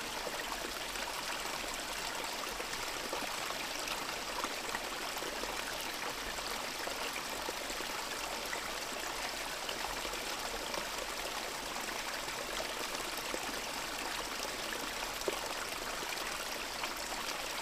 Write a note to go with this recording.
Sibley creek, spring 2009, .... with constant air traffic overhead